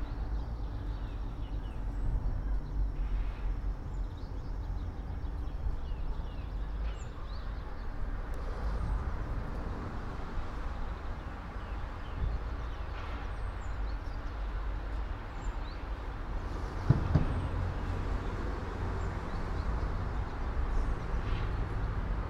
all the mornings of the ... - mar 2 2013 sat
Maribor, Slovenia